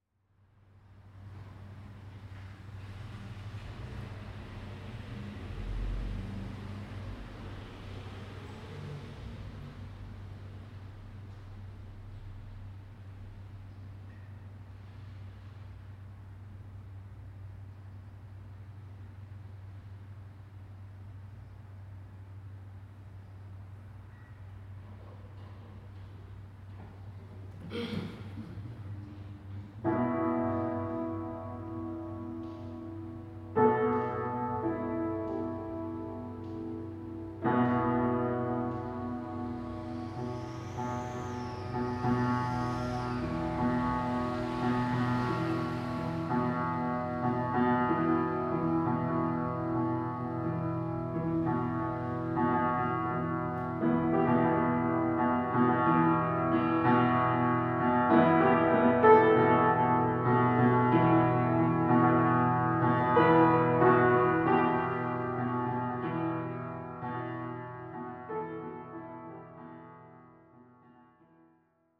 Vodnikova ulica, Ptuj, Slovenija - Sound of broken piano and cars from Fuerstova hiša
Fuerstova hiša is cultural space with many artist involved. There is also one broken piano to play. Sound was recorded on 1.7.2018 with Zoom H1
Ptuj, Slovenia, 1 July 2018